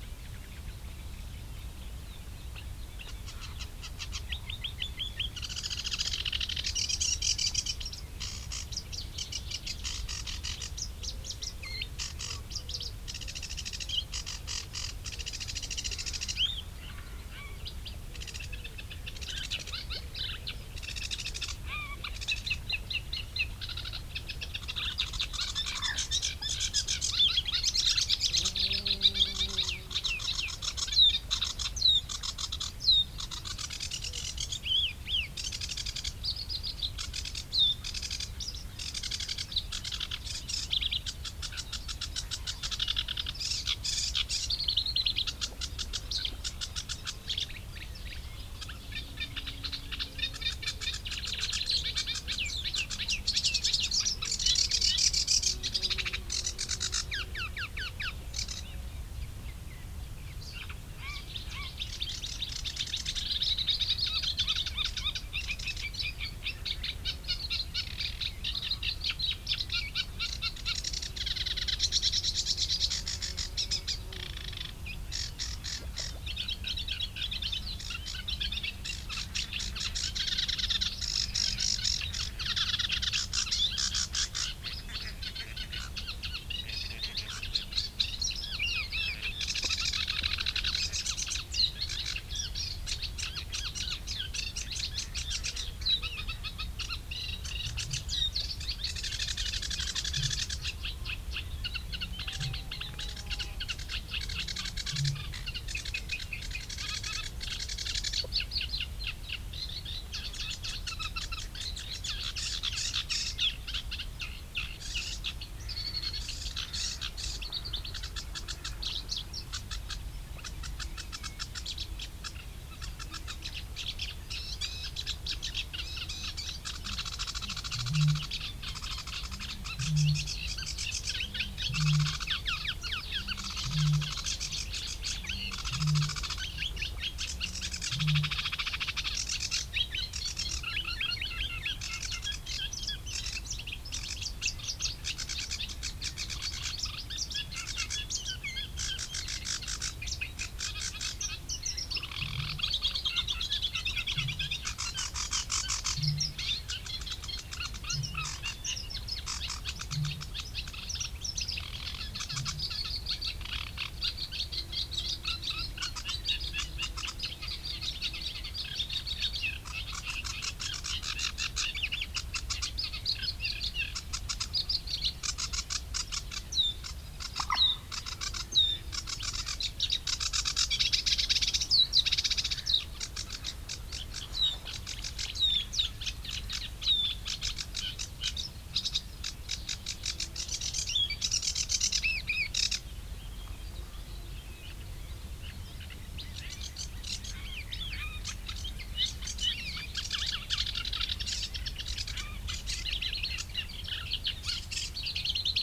Meare, UK - Reedbeds ... sedge and reed warbler singing ...
Reedbed ... singing reed and sedge warbler ... bird song and calls from ... bittern ... coot ... cetti's warbler ... gadwall ... wood pigeon ... mute swan wing beats ... lavalier mics clipped to sandwich box ... background noise ... planes etc ...